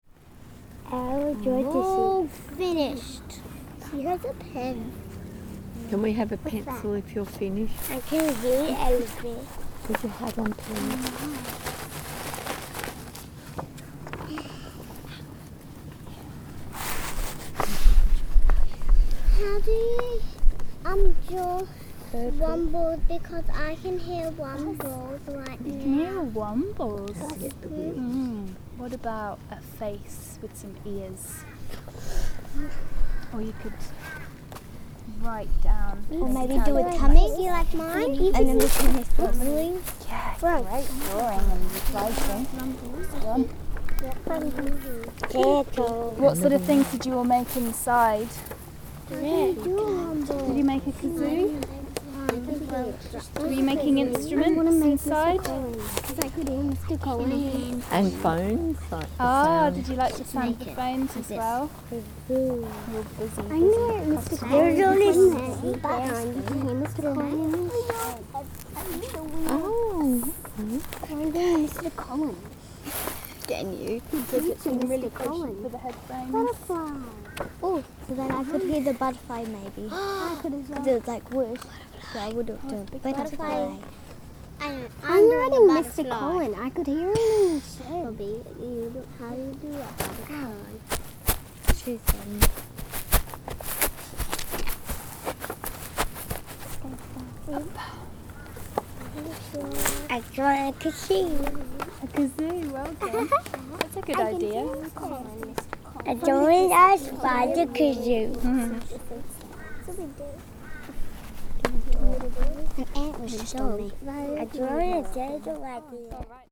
Nerang QLD, Australia - Drawing sound maps
Children at an Easter school holiday club sit in a circle under a shady tree in a school field. Each draw a Sound Map to interpret the sounds they can hear around them. They also chat about the musical instruments they made before the Sound Map exercise - kazoos and cup string telephones!
Recorded at an Easter holiday 'Sounds in Nature' workshop run by Gabrielle Fry, teaching children how to use recording equipment to appreciate sounds in familiar surroundings. Recorded on a Zoom H4N.
6 April 2016, ~11:00